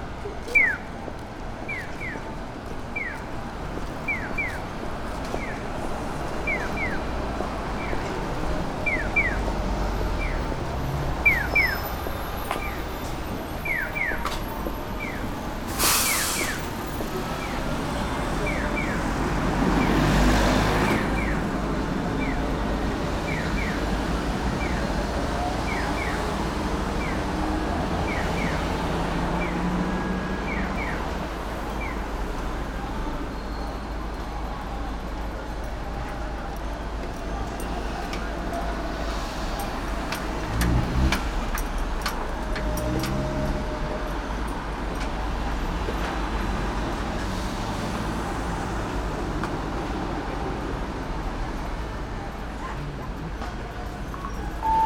pedestrian crossing, near river, Gion, Kyoto - crossings sonority
6 November 2014, ~10:00